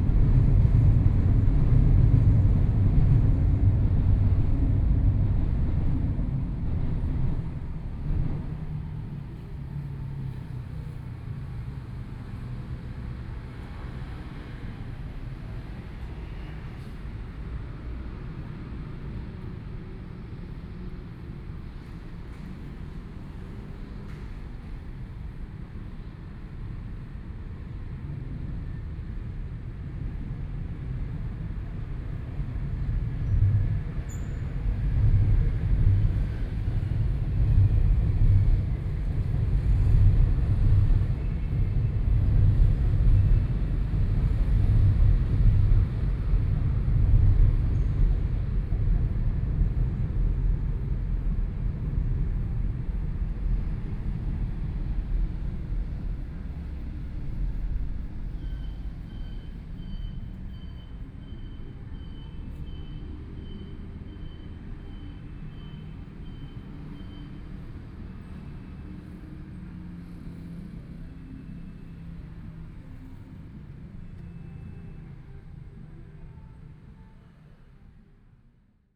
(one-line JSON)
{"title": "Beitou, Taipei - MRT train sounds", "date": "2014-04-17 21:21:00", "description": "Traffic Sound, In the bottom of the track, MRT train sounds\nPlease turn up the volume a little. Binaural recordings, Sony PCM D100+ Soundman OKM II", "latitude": "25.13", "longitude": "121.50", "altitude": "12", "timezone": "Asia/Taipei"}